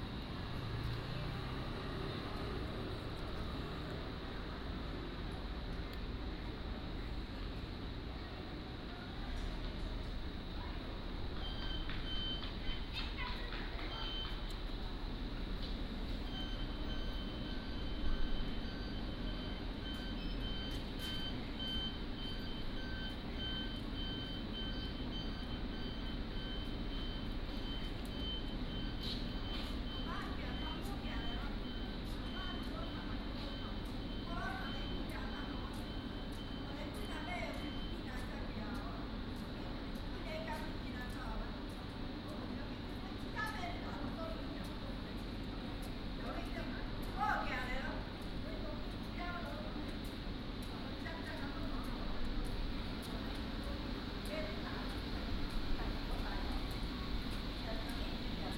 塘岐村, Beigan Township - In the street
In the street, Small village